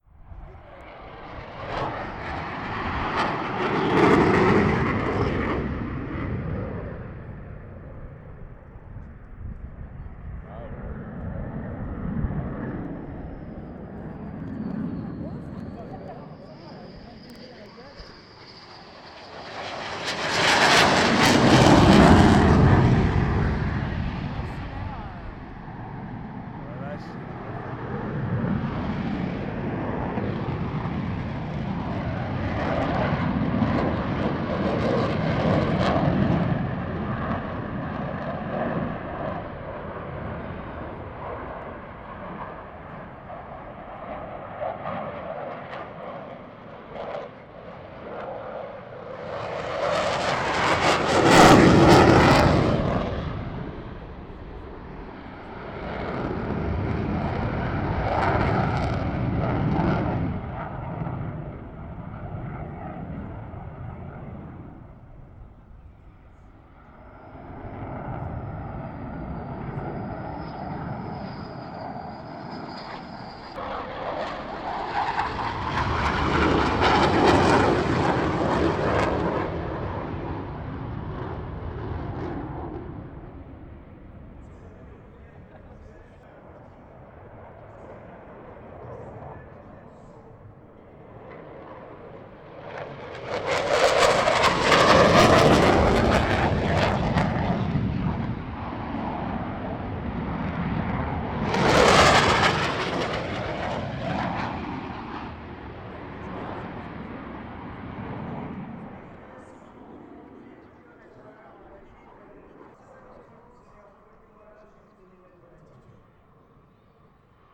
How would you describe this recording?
two Mirage fighter planes are coming and going above our heads at high speed making everything vibrate, tearing the air into these saturated sounds.